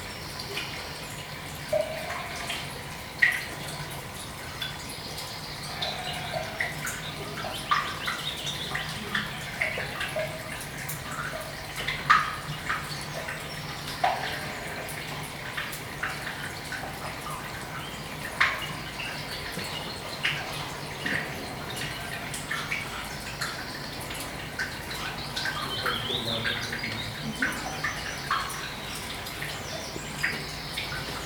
Scoska Cave, Littondale - Scoska Cave
Just a short walk from Arncliffe, Littondale, there's Scoska Cave.
Yorkshire and the Humber, England, United Kingdom, 1 May 2022, ~11:00